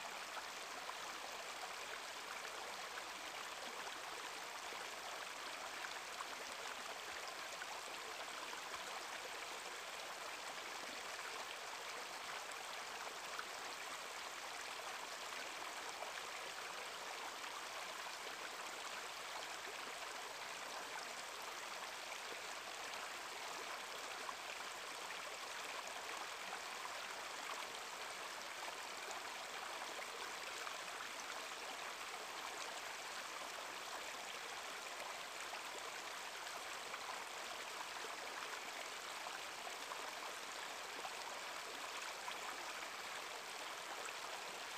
Berkeley - Wild Cat creek 3. - lake Anza
creek running gently alongside some cave like formations